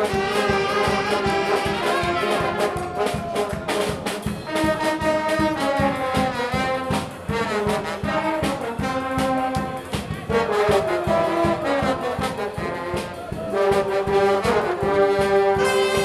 {"title": "Capucins - Victoire, Bordeaux, France - Fanfare !", "date": "2014-04-20 12:52:00", "description": "Next to the Capucins market place, two medecine students fanfares, from Reims and Bordeaux, gathered to play more than one hour and brightened up this cloudy day.\n[Tech.info]\nRecorder : Tascam DR 40\nMicrophone : internal (stereo)\nEdited on : REAPER 4.611", "latitude": "44.83", "longitude": "-0.57", "altitude": "14", "timezone": "Europe/Paris"}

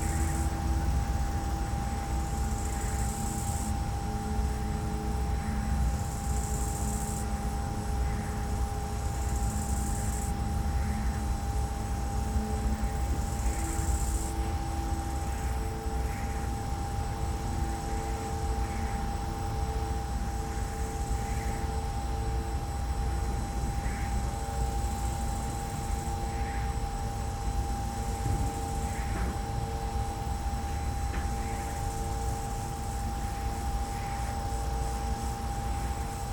{"title": "wind turbine Donau Insel, Vienna", "date": "2011-08-12 13:10:00", "description": "silent wind turbine with crickets", "latitude": "48.20", "longitude": "16.46", "altitude": "159", "timezone": "Europe/Vienna"}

